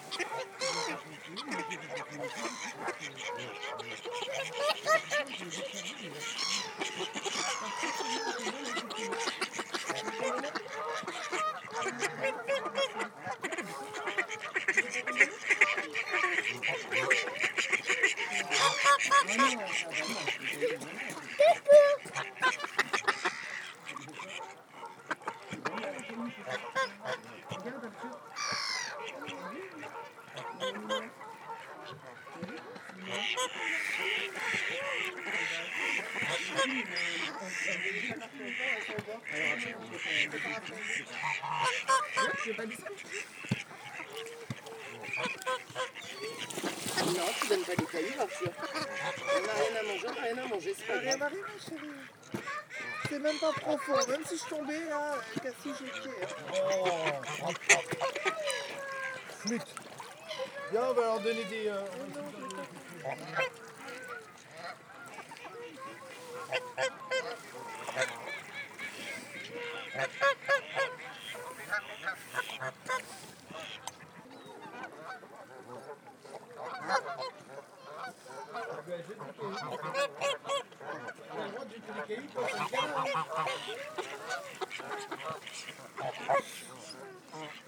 Rambouillet, France - An hour close to the birds on the Rondeau lake
During the winter period, waterfowl were hungry. Intrigued by their presence in large numbers on the waters of the Lake Le Rondeau, near the Rambouillet castle, I recorded their songs for an uninterrupted hour. Since they were hungry, they solicited all the walkers. We hear them a lot. The recording is quiet on this new year day and really provokes the sound of a lullaby.
We can hear : Mallard duck, Canada goose, Eurasian Coot, Domestic goose, Blackhead gull, Homo sapiens.
1 January 2019, 15:15